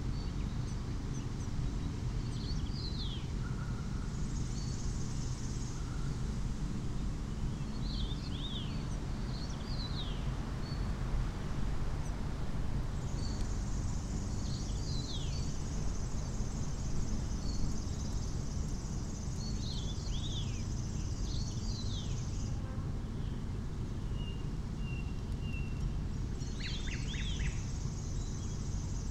the place I always liked to capture. and finally it's here. good circumstances: very windy day blocks unwanted city's sounds. this is two part recording. the first part: I stand amongst the trees with conventional mics. the second part: contact mics and geophone is placed on metallic fence surrounding the electric substation. low frequencies throbbing everything...